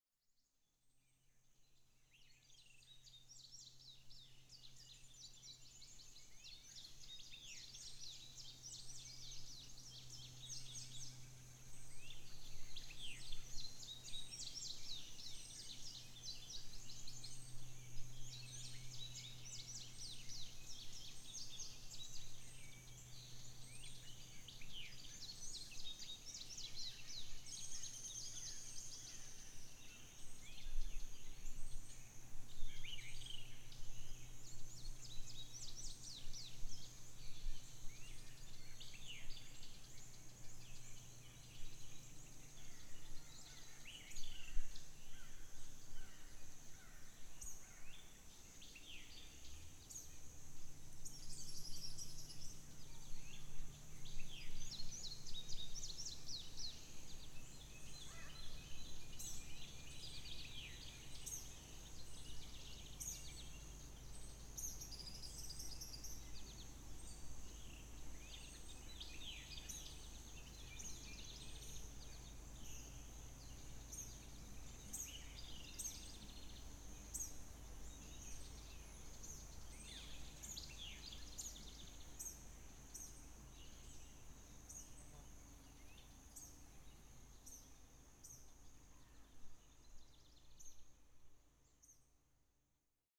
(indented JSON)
{
  "title": "Pearson Mill State Recreation Area, S 300 W, Wabash, IN, USA - Under the willow trees, Pearson Mill State Recreation Area",
  "date": "2020-07-23 19:18:00",
  "description": "Under the willow trees, Pearson Mill State Recreation Area. Recorded using a Zoom H1n recorder. Part of an Indiana Arts in the Parks Soundscape workshop sponsored by the Indiana Arts Commission and the Indiana Department of Natural Resources.",
  "latitude": "40.67",
  "longitude": "-85.84",
  "altitude": "240",
  "timezone": "America/Indiana/Indianapolis"
}